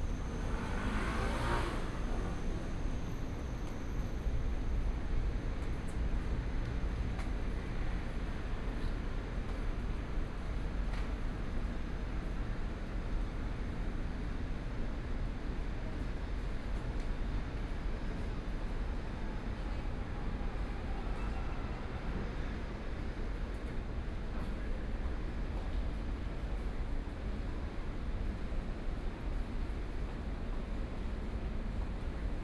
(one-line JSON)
{"title": "臺北市立聯合醫院仁愛院區, Taipei City - By walking to the hospital", "date": "2015-07-24 13:26:00", "description": "By walking to the hospital", "latitude": "25.04", "longitude": "121.55", "altitude": "17", "timezone": "Asia/Taipei"}